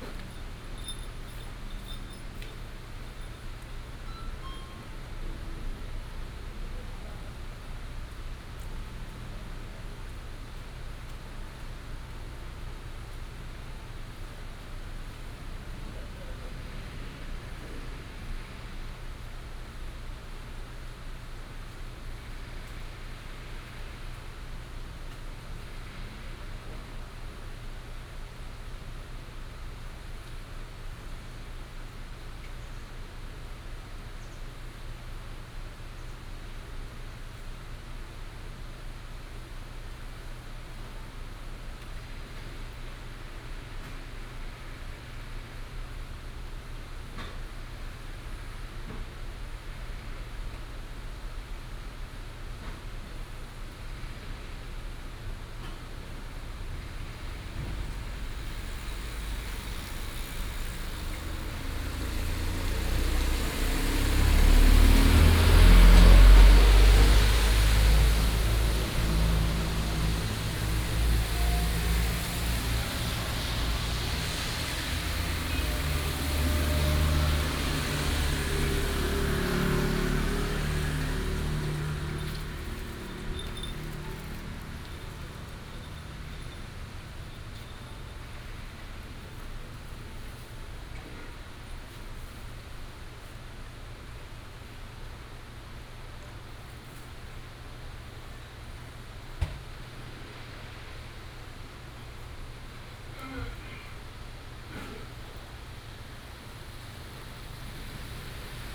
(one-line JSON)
{"title": "Wenquan St., Wulai Dist., New Taipei City - Rainy day", "date": "2016-12-05 10:10:00", "description": "In front of the convenience store, Traffic sound, Construction noise, Rainy day", "latitude": "24.86", "longitude": "121.55", "altitude": "138", "timezone": "GMT+1"}